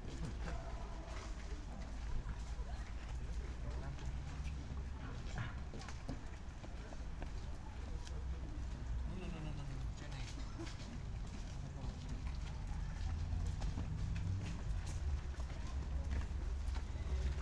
atmosphere at the Fluxus Bridge